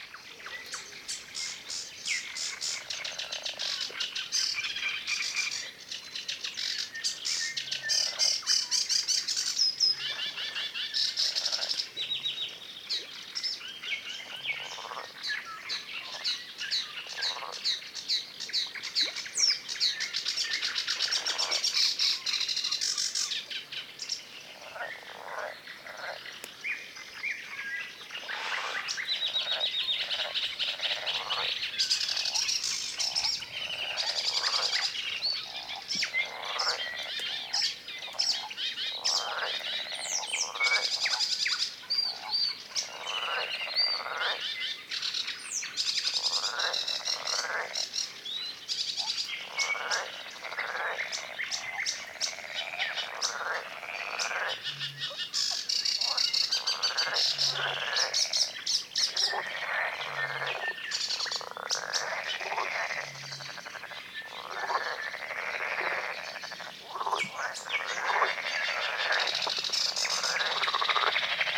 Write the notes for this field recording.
made during a late May night time field recording excursion to the Rapina Polder